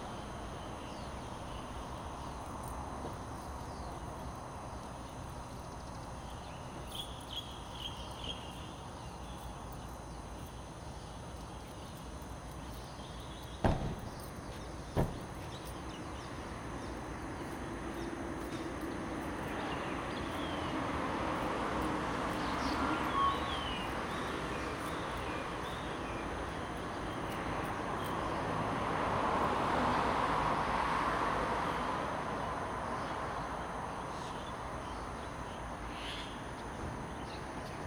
in the parking lot, traffic sound, early morning, birds chirping
Zoom H2n MS+XY